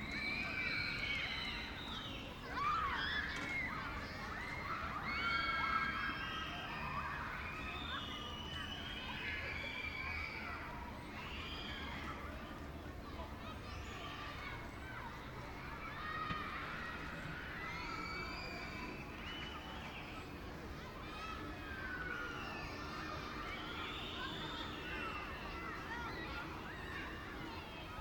Oosterpark, Amsterdam, The Netherlands - Children playing in the swimming pool on a hot day
The tower bell rings 2 a clock. The low boom of the tram trembles the hot air.
Crows chatter in the trees surrounding the park and masking the city's noise.
After a cold spring, summer has finally arrived. Small children enjoy the cool water
and ice cream, in the first city park of Amsterdam. A nostalgic image of 'endless'
vacations and hot summer days, recorded in the cool shadow of a music kiosk.
Some equalisation and fades.